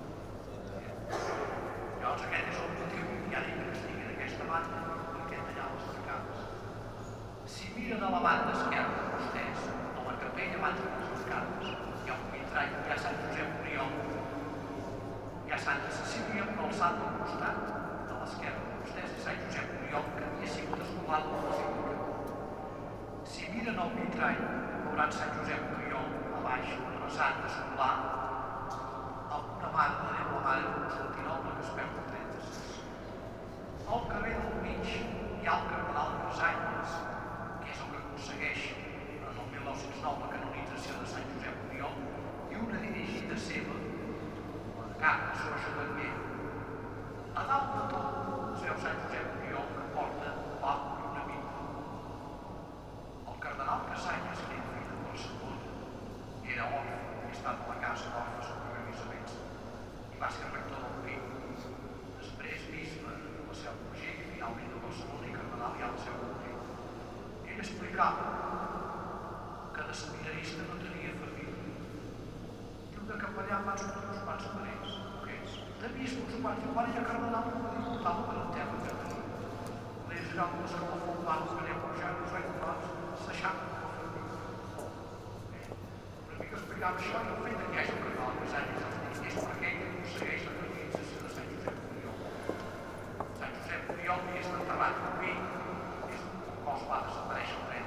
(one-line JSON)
{"title": "Santa María del Mar", "date": "2011-01-26 11:53:00", "description": "Inside the Catherdral. A man explaining the meaning and history with a microphone. Tourists all over the space.", "latitude": "41.38", "longitude": "2.18", "timezone": "Europe/Madrid"}